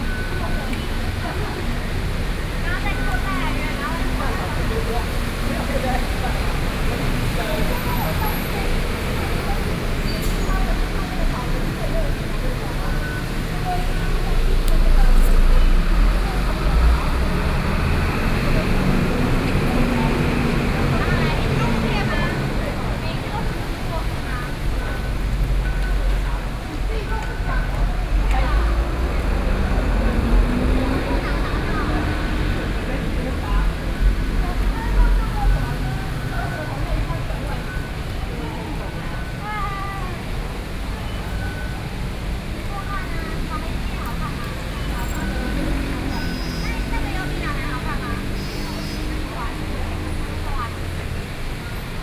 Station hall entrances, Traffic Noise, Sony PCM D50 + Soundman OKM II
Taipei City, Taiwan